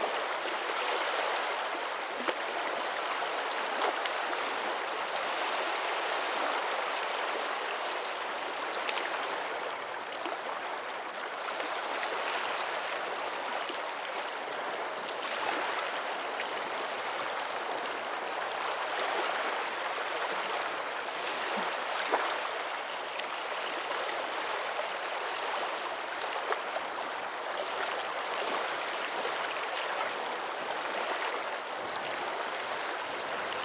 Russia, White Sea - White Sea, The Summer shore
White Sea, The Summer shore: the noise of the White Sea.
Белое море, Летний берег: шум моря.